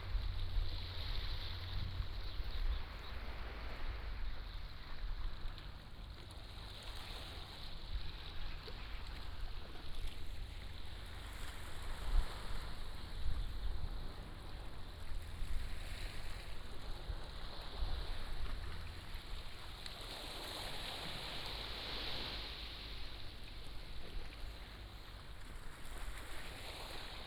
{"title": "馬鼻灣海濱公園, Beigan Township - On the coast", "date": "2014-10-15 15:20:00", "description": "On the coast, Sound of the waves", "latitude": "26.22", "longitude": "120.00", "altitude": "17", "timezone": "Asia/Taipei"}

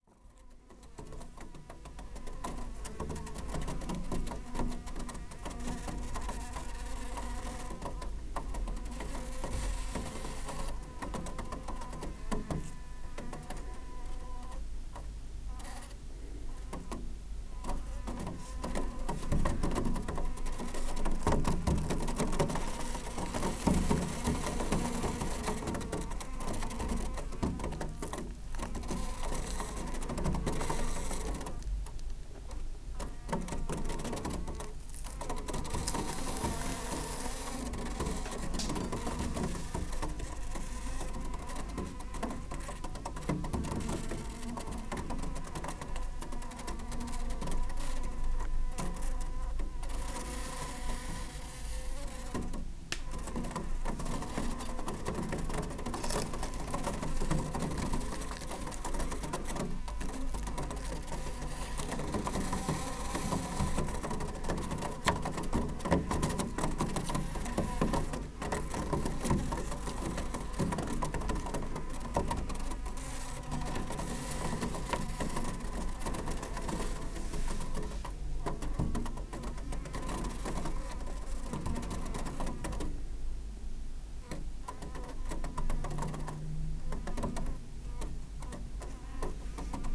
{"title": "Teofila Mateckiego, Poznan, Polska - fruit flies", "date": "2021-08-24 10:00:00", "description": "a bunch of fruit flies trapped under a plastic wrap. roland r-07", "latitude": "52.46", "longitude": "16.90", "altitude": "97", "timezone": "Europe/Warsaw"}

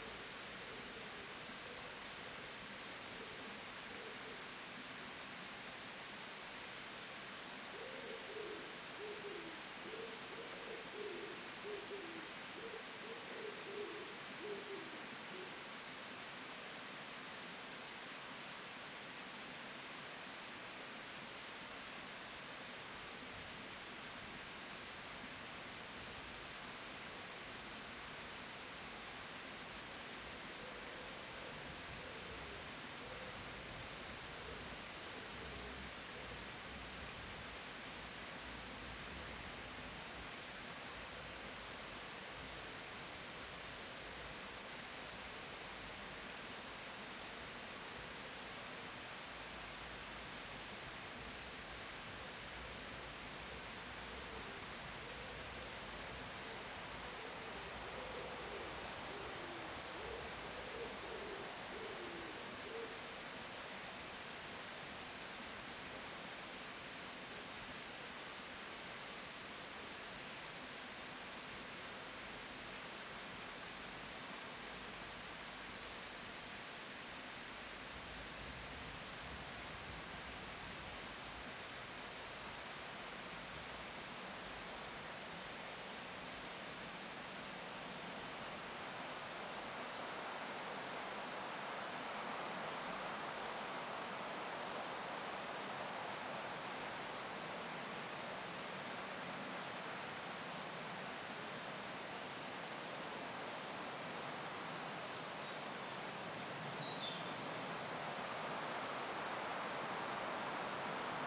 {"title": "Gowbarrow Hill - Forest recording", "date": "2020-09-09 06:50:00", "description": "Sony PCM-A10 and LOM Mikro USI's left in the forest while making breakfast and unsetting camp.", "latitude": "54.59", "longitude": "-2.91", "altitude": "371", "timezone": "Europe/London"}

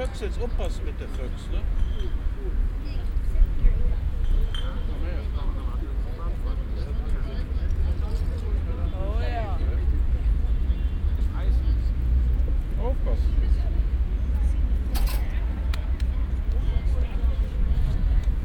{"title": "cologne, ebertplatz, platzbewohner", "date": "2008-04-29 21:18:00", "description": "parkbankgespräche am nachmittag\nsoundmap: koeln/nrw\nproject: social ambiences/ listen to the people - in & outdoor nearfield recordings", "latitude": "50.95", "longitude": "6.96", "altitude": "53", "timezone": "Europe/Berlin"}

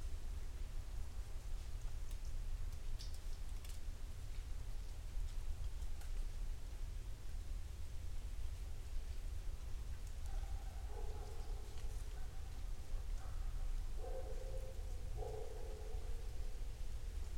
{"title": "Denmark, ME - Beaver Pond Rd", "date": "2017-10-17 11:07:00", "description": "Walking around the woods. Recorded with Usi mics on a Sound Devices 633", "latitude": "43.99", "longitude": "-70.82", "altitude": "128", "timezone": "America/New_York"}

